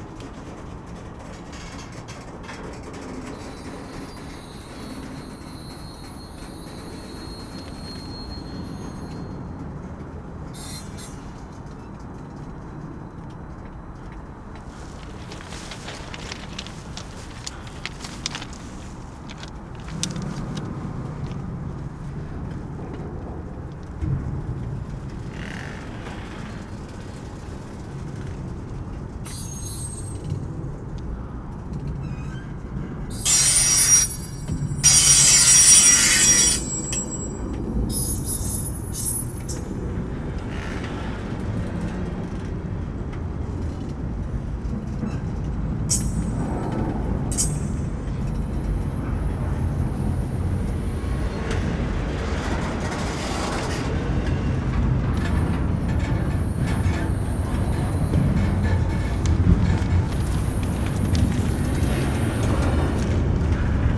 Binaural - Walking along the railroad, I heard some signs that a stalled train may be preparing to move...
CA14 > DR100 MK2
Houston, TX, USA, 16 November 2012, 16:30